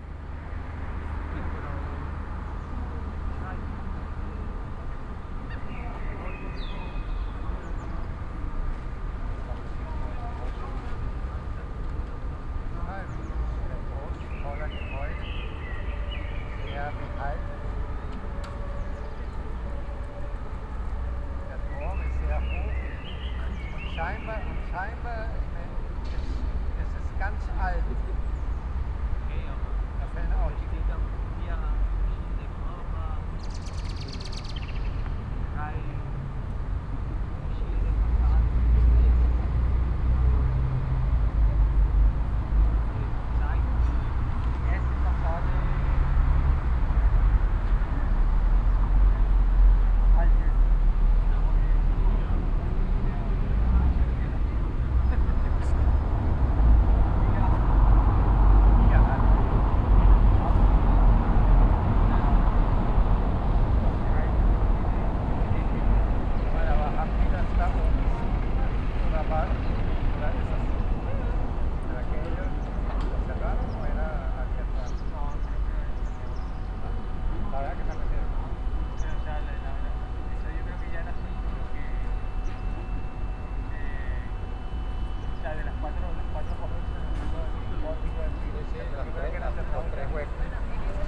Quiet Sunday around noon at the town hall square with 2 PM chimes of the town hall clock. A few people around talking, a little traffic in a distance some gulls. Sony PCM-A10 recorder with Soundman OKM II Klassik microphone and furry windjammer.
Schleswig-Holstein, Deutschland, 30 May 2021